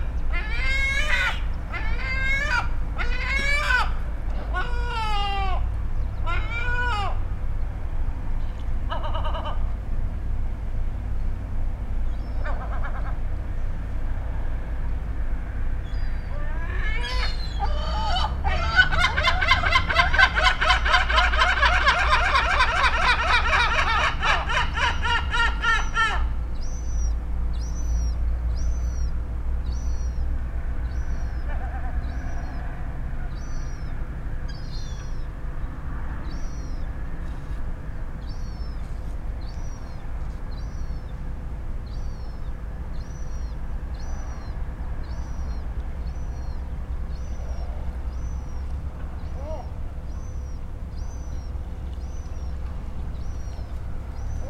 from/behind window, Novigrad, Croatia - early morning preachers
7 September 2012, 06:22